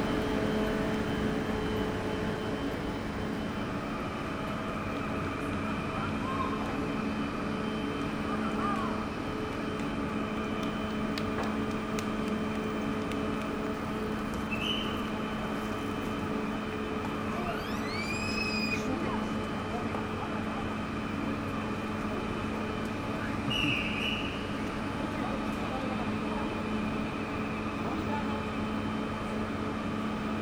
St Georges, Paris, France - Paris Saint-Lazare station
A trip into the Paris Saint-Lazare station. There's an old piano in the station. Persons are using it and singing songs about Jesus.
20 July, 18:00